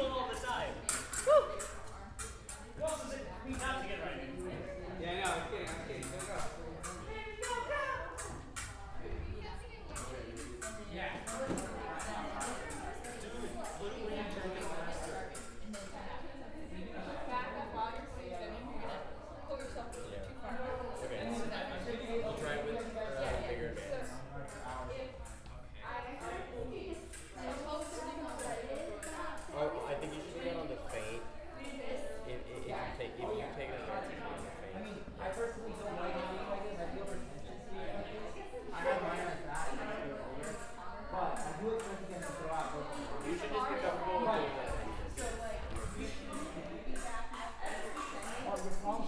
Robertson Gymnasium, Isla Vista, CA, USA - UCSB Fencing Practice
Recorded with a Zoom H4N recorder. UCSB Fencing practice on the upper floor of Robertson Gymnasium. Focus on Sabre fencing.